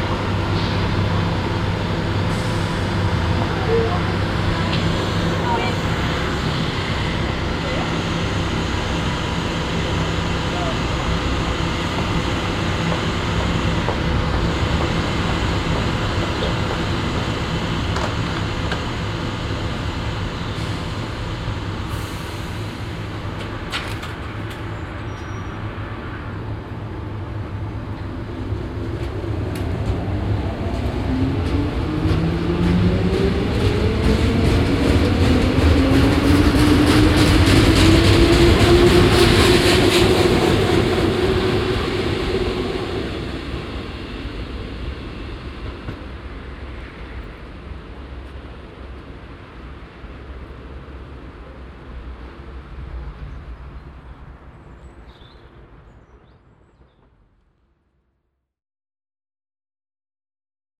{
  "title": "hilden, sbahnstation, richrather strasse - hilden, sbahnstation, ein + ausfahrt bahn",
  "description": "ein- und abfahrt eines sbahn zuges, nachmittags\nsoundmap nrw:\ntopographic field recordings, social ambiences",
  "latitude": "51.16",
  "longitude": "6.94",
  "altitude": "54",
  "timezone": "GMT+1"
}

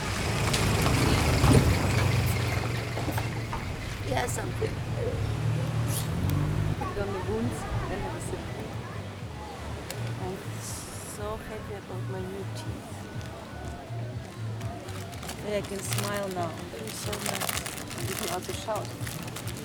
{
  "title": "Sudak, Crimea, Ukraine - Genoese Fortress - Climbing the coast healing walk - from sea to cliff",
  "date": "2015-07-12 15:30:00",
  "description": "This is a recover-hike, setting off at the beach leading straight up to the reef-lining cliffs, themselves edged by the mighty genoese fortress. as the political situation killed off the tourism drastically, you will hear us passing desparate tourist-attraction-sellers, meet locals hanging about and some other lost travellers like us. there's pebbles under our feet and the kids climb the extremely dangerous rocks which for several hundred years kept away any enemy. you follow us until the zoom recorder reaches the top, where wind and waves and the snippets of the starting nightlife -for noone- from deep down mix together into an eary cocktail.",
  "latitude": "44.84",
  "longitude": "34.96",
  "altitude": "130",
  "timezone": "Europe/Simferopol"
}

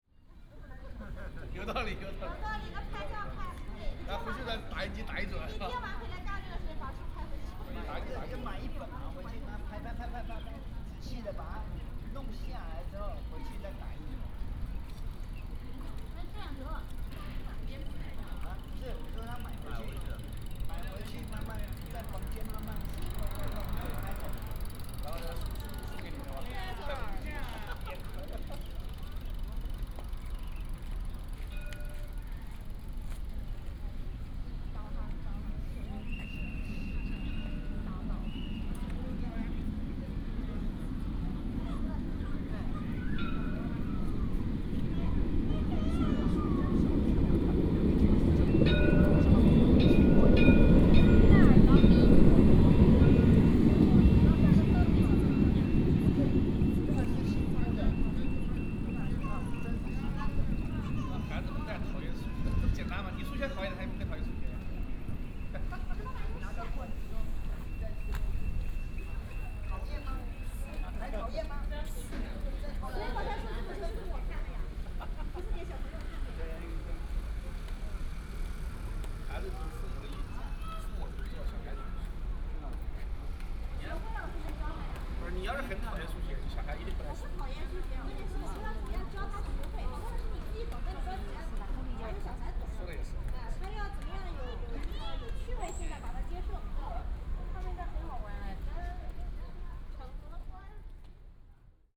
{
  "title": "駁二藝術特區, Yancheng Dist., Kaohsiung City - Light rail train",
  "date": "2018-03-30 17:32:00",
  "description": "Circular Line (KLRT), Traffic sound, birds sound, Light rail train, China tourist, Light rail tram running\nBinaural recordings, Sony PCM D100+ Soundman OKM II",
  "latitude": "22.62",
  "longitude": "120.28",
  "altitude": "2",
  "timezone": "Asia/Taipei"
}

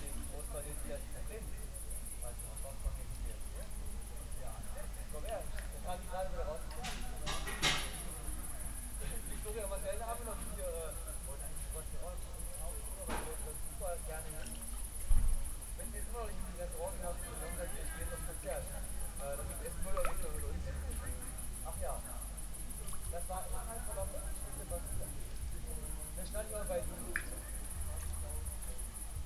{
  "title": "Bredereiche, Fürstenberg/Havel, Deutschland - midnight at the river Havel",
  "date": "2019-07-12 23:55:00",
  "description": "fish are still jumping, another distant party is going on, cars still rumble over coblestones\n(Sony PCM D50, Primo EM172)",
  "latitude": "53.14",
  "longitude": "13.24",
  "altitude": "53",
  "timezone": "Europe/Berlin"
}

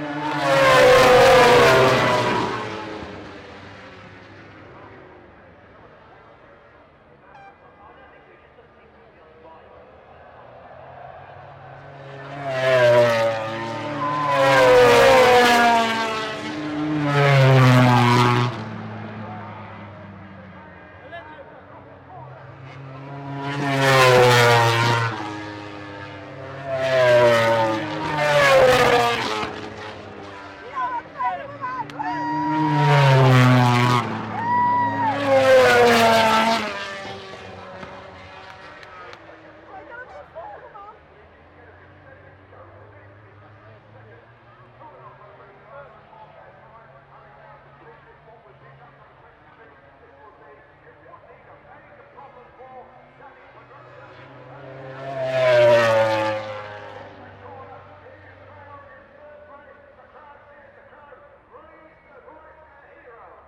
{
  "title": "Unnamed Road, Derby, UK - British Motorcycle Grand Prix 2006 ... MotoGP race",
  "date": "2006-07-02 13:00:00",
  "description": "British Motorcycle Grand Prix 2006 ... MotoGP race ... one point stereo mic to mini-disk ...",
  "latitude": "52.83",
  "longitude": "-1.37",
  "altitude": "81",
  "timezone": "Europe/London"
}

